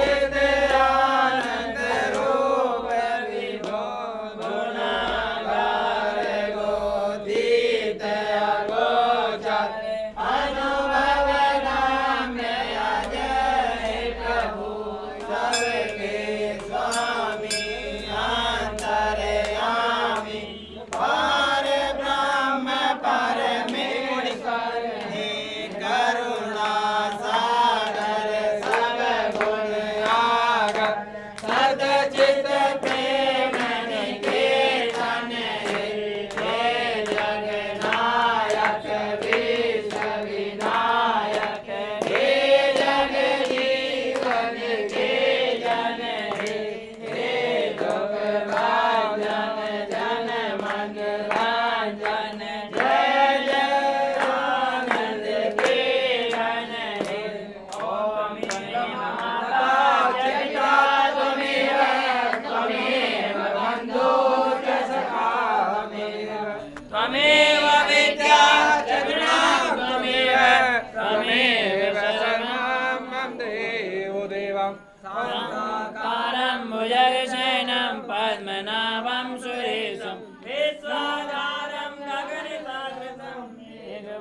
Rishikesh - cérémonie de la fin d'après-midi